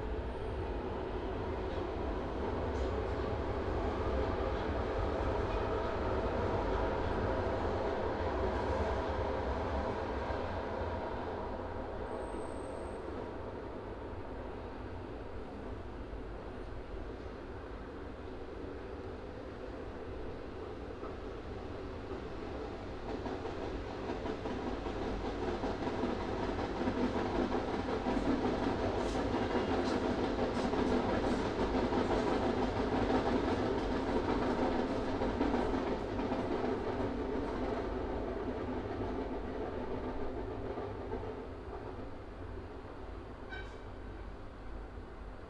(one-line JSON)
{"title": "R. Ipanema - Mooca, São Paulo - SP, 03164-200, Brasil - CAPTAÇÃO APS UAM 2019 - INTERNA/EXTERNA", "date": "2019-05-01 15:00:00", "description": "Captação de áudio interna para cena. Trabalho APS - Disciplina Captação e edição de áudio 2019/1", "latitude": "-23.55", "longitude": "-46.61", "altitude": "740", "timezone": "America/Sao_Paulo"}